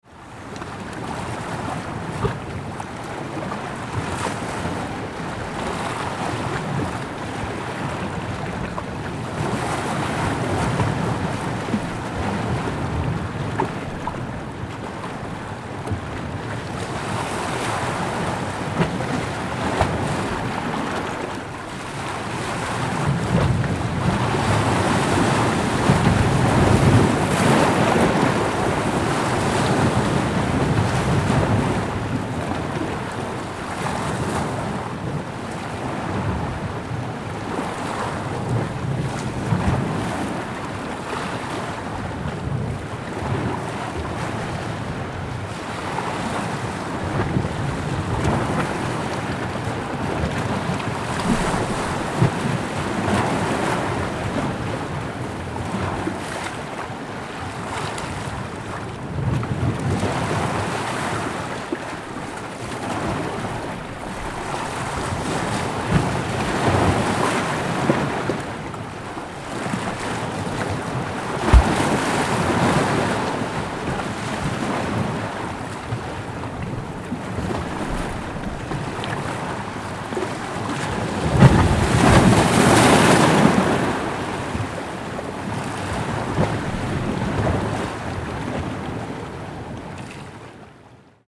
Russia, The White Sea - The White Sea, The Karelia shore
The White Sea, The Karelia shore. The recording was made during a trip to shore the White Sea.
Запись сделана во время путешествия по берегу Белого моря. Карельский берег.
Recorded on Zoom H4n
Republic of Karelia, Russia, 22 June 2012, 09:00